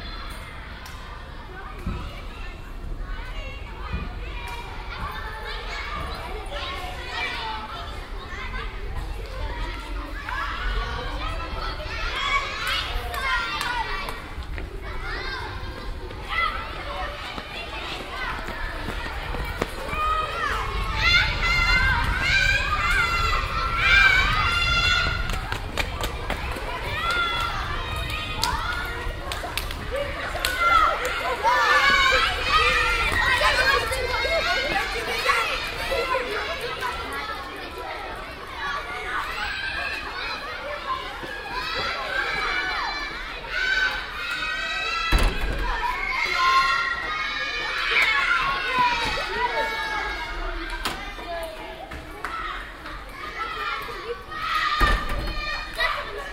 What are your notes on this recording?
soundmap: cologne/ nrw, schulhof grundschule zwirnerstr, morgens in der schulpause, project: social ambiences/ listen to the people - in & outdoor nearfield recordings